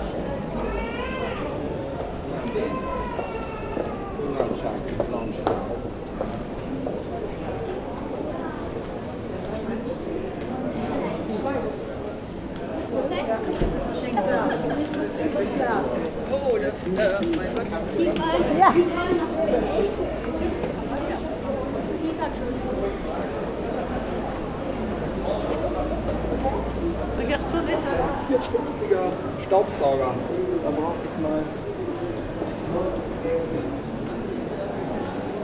Maastricht, Pays-Bas - Commercial street
People walking quietly in the very commercial street of Maastricht.